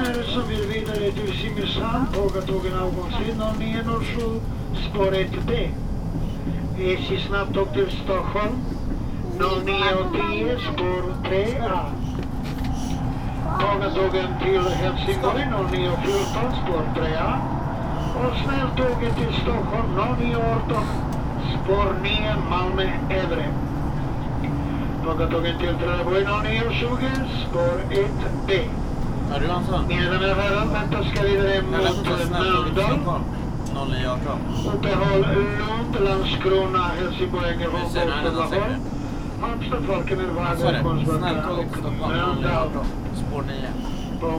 Öresundsbron, Sweden - Øresund train
Into the Øresund train, called Öresund in swedish. This is a train which begins from Copenhagen (Denmark) and goes to Malmö (Sweden). The train rides into a tunnel in Denmark and 'into' a bridge in Sweden. This recording is the end of the course, arriving in Malmö.